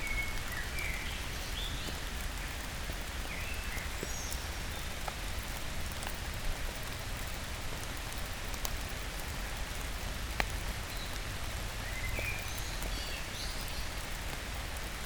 Mont-Saint-Guibert, Belgique - Rain
It's raining since a long time. It's a small storm. Trees trickle on the ivy. It's a quiet place, the road is so bad (very old cobblestones) that nobody's passing by there. And rain fall, fall and fall again !